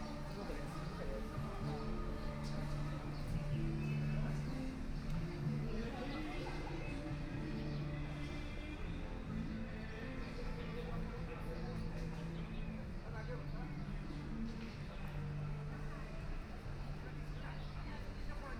Heping Park, Hongkou District - Holiday parks
Walking through the various areas in the park, Binaural recording, Zoom H6+ Soundman OKM II
23 November 2013, ~11:00